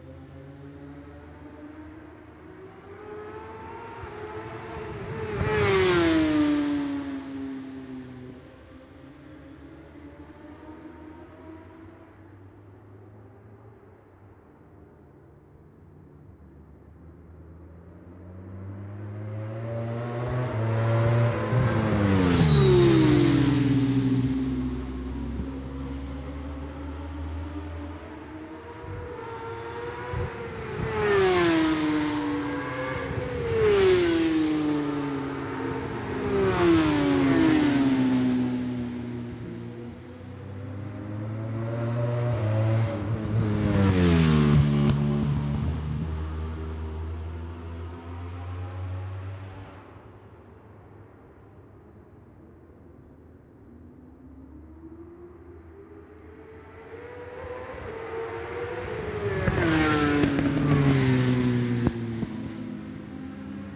West Kingsdown, UK - British Superbikes 2004 ... qualifying two ...
British Superbikes ... qualifying two ... Dingle Dell ... Brands Hatch ... one point stereo mic to mini disk ...